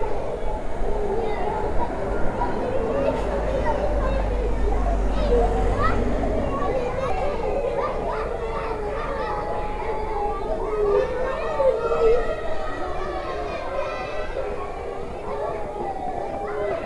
A school called école de Sart. Sounds are coming from very young children playing.